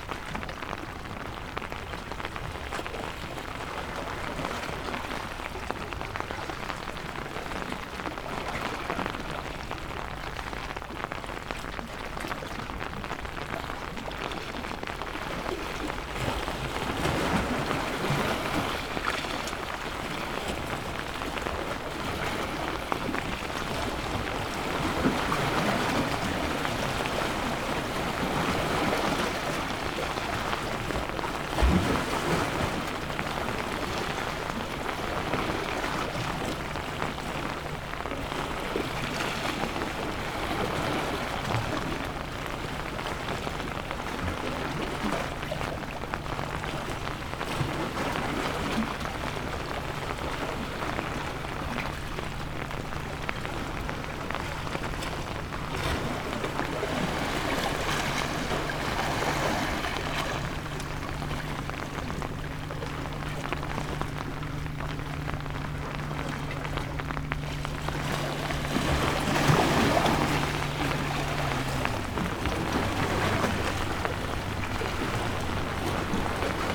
lighthouse, Novigrad - rain, seawaves, umbrella
walk around lighthouse when the raindrops poured down from marvelous, endlessly morphing clouds, seawaves, white rocks and all the spaces in-between, umbrella with two layers
Novigrad, Croatia